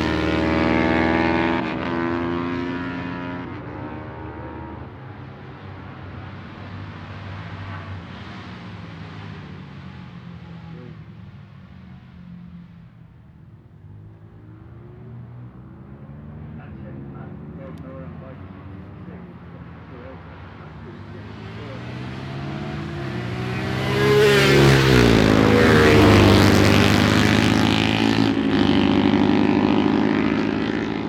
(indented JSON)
{
  "title": "Jacksons Ln, Scarborough, UK - barry sheene classic 2009 ... race ...",
  "date": "2009-05-23 12:00:00",
  "description": "barry sheene classic 2009 ... race ... one point stereo mic to minidisk ...",
  "latitude": "54.27",
  "longitude": "-0.41",
  "altitude": "144",
  "timezone": "Europe/London"
}